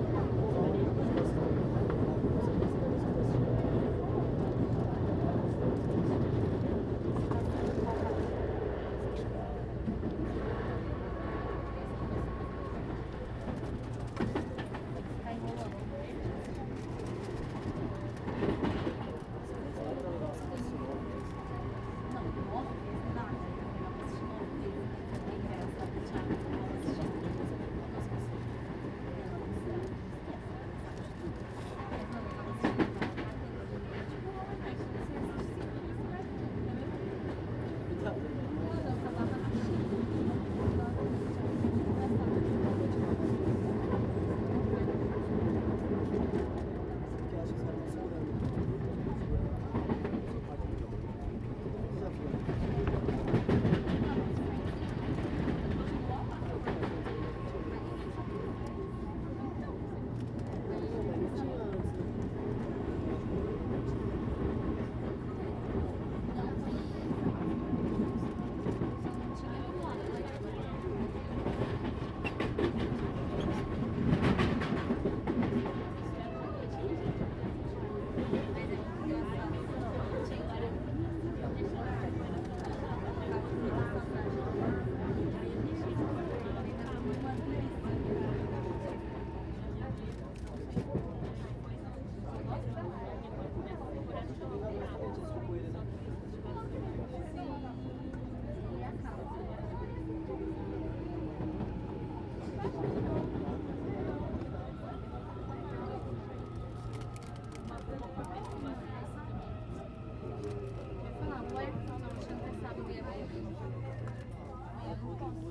Av. Auro Soares de Moura Andrade - Barra Funda, São Paulo - SP, 01156-001, Brasil - interior do vagão de trêm
captação estéreo com microfones internos
São Paulo - SP, Brazil